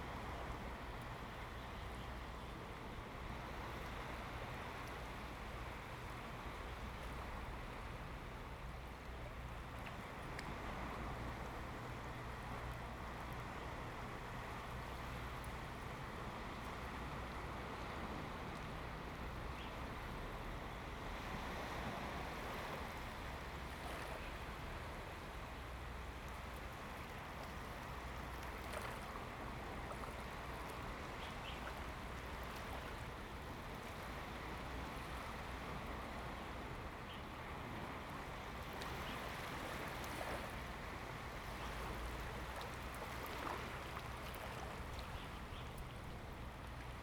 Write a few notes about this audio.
Sound of the waves, Waves and tides, Zoom H2n MS +XY